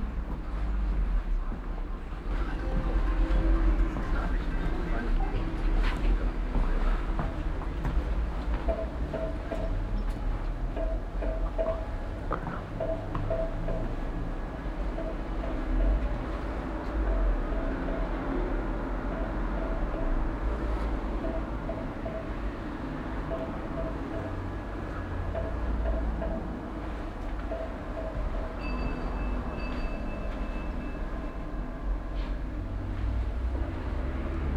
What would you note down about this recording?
verkehr von der haupstrasse, betreten des spielsalons, gang durch den laden, mittags, project: :resonanzen - neanderland - soundmap nrw: social ambiences/ listen to the people - in & outdoor nearfield recordings, listen to the people